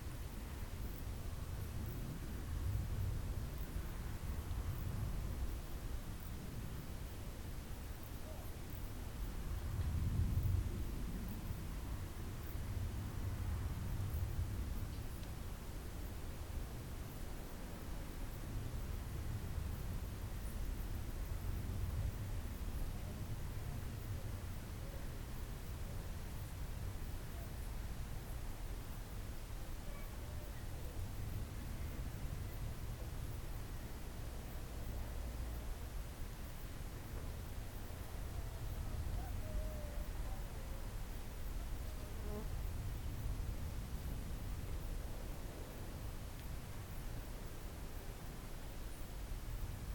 Ellend, Hangfarm, Magyarország - Meadow silence with bells of the surrounding villages
Pre-autumn silience evening with 7 o'clock bells of the village Ellend (400m) and then of the village Berkesd (3000m). We have dinner every day at 7pm, so this bell is also a sign of it.
(Bells are ringing also the next day 8am when someone from the village dies.)
This place is going to be a location for artificial soundscapes under the project name Hangfarm (soundfarm).